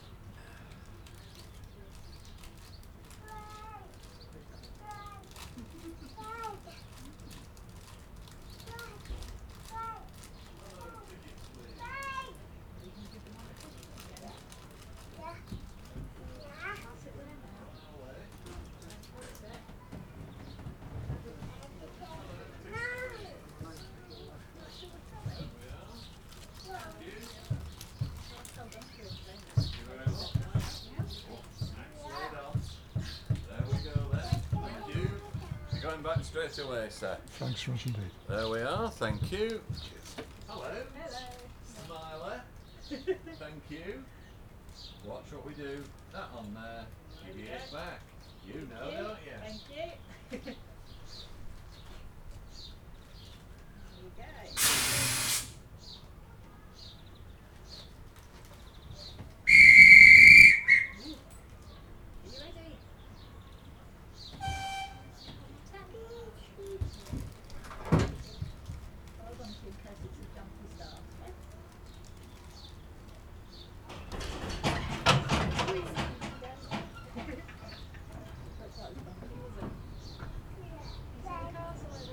Scarborough - Scarborough North Bay Railway
Train ride from Scalby Mills Station to Peasholm Station ... lavalier mics clipped to baseball cap ...
Scarborough, UK, 10 July 2016, 10:30